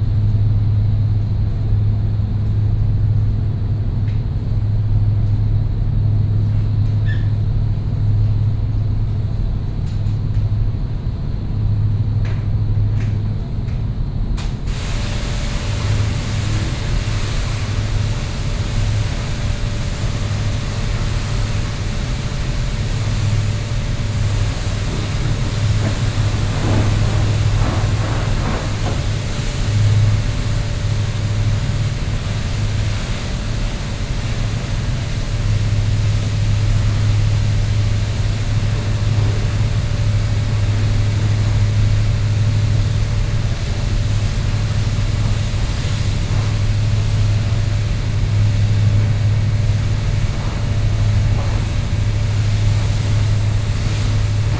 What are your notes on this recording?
on the top deck, a guy cleaning the ship with water